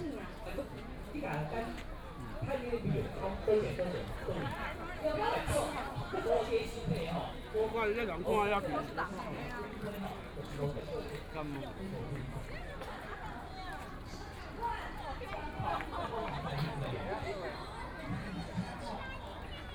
Walking along the lake, Very many people in the park, Distance came the sound of fireworks, Footsteps
Please turn up the volume a little. Binaural recordings, Sony PCM D100+ Soundman OKM II
Taipei City, Taiwan, 12 April